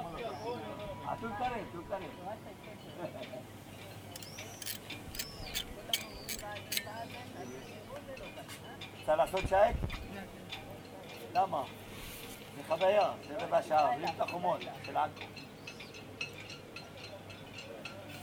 Leopld ha-Sheni St, Acre, Israel - Port
Ocean, Restorant, Port
3 May 2018